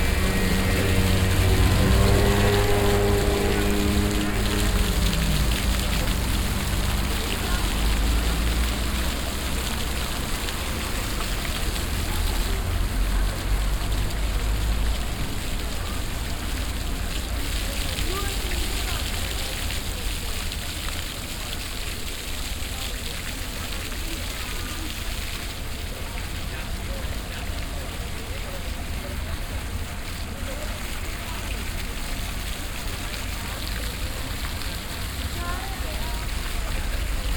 essen, willy brandt platz, fountain
A floor fountain nearby a main street. Water sound nearly overwhwelmed by the passing traffic and passengers walking by. Nearby a group of alcohol drinking people. // the fountain seems to be new, as the topographic picture still shows a taxi stand here//
Projekt - Stadtklang//: Hörorte - topographic field recordings and social ambiences